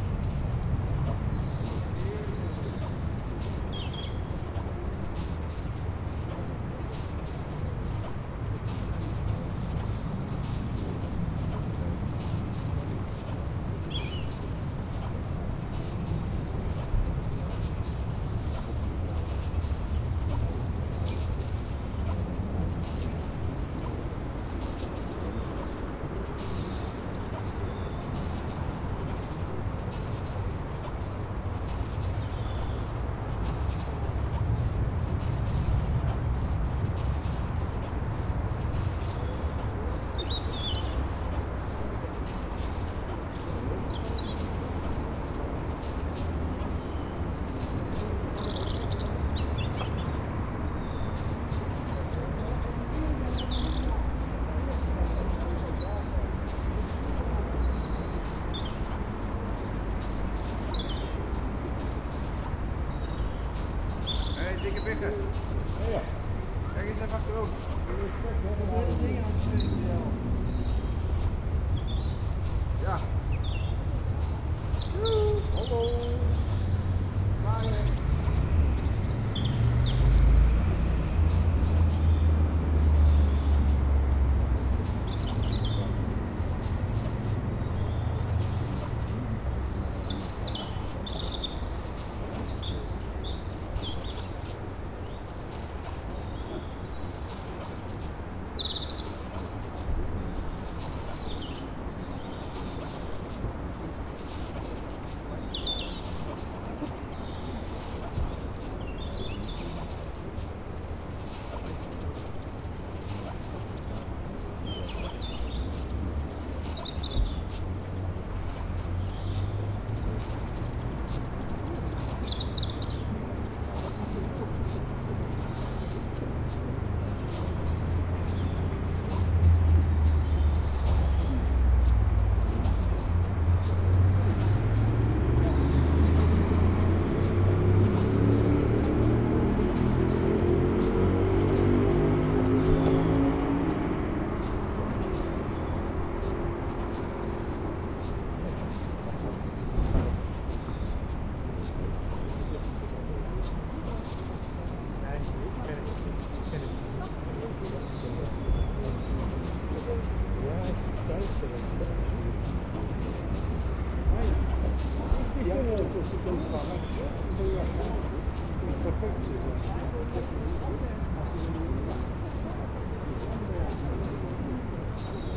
burg/wupper: sesselbahn - the city, the country & me: under a supporting tower of a chairlift
rope of chairlift passes over the sheaves, church bells, singing bird
the city, the country & me: october 15, 2011
Solingen, Germany, 15 October